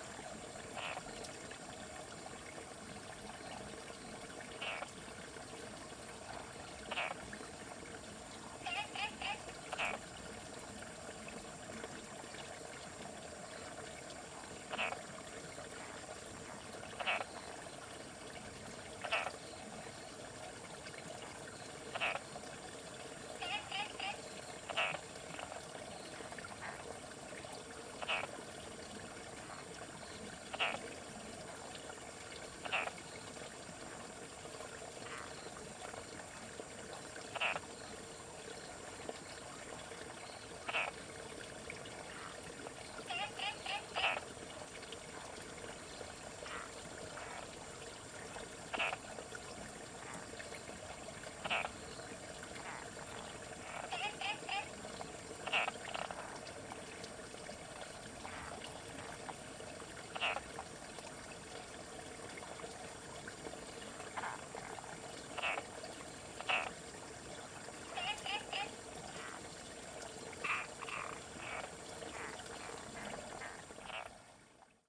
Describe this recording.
First experience. Zoon H2n MX+XY (2015/8/31 001), CHEN, SHENG-WEN, 陳聖文